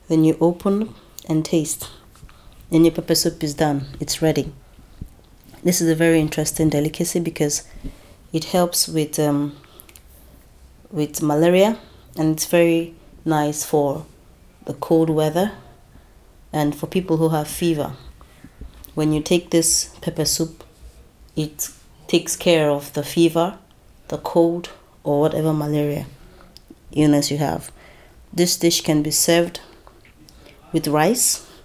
Kinderbetreuung of AfricanTide - Chinelos goat-meat pepper soup...
...you wanted to know the secrets of the entire recipe ...?